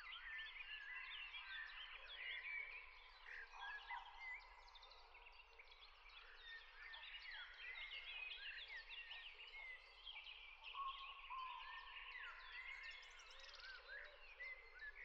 Warburg Nature Reserve, Henley-on-Thames, UK - Before the Dawn Chorus and Beyond Part 2
I made a similar recording in the same spot a year ago and wanted to compare the two bearing in mind the C19 lockdown. There are hardly any planes and the roads are a lot quieter. Sony M10